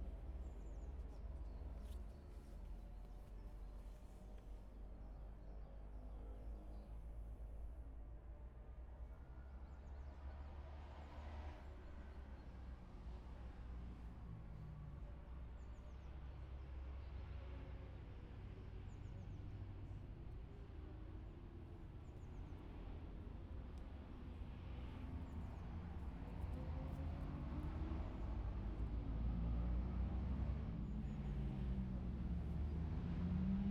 Scarborough, UK - motorcycle road racing 2012 ...
600-650cc twins qualifying ... Ian Watson Spring Cup ... Olivers Mount ... Scarborough ...
open lavalier mics either side of a furry table tennis bat used as a baffle ...grey breezy day ...
15 April 2012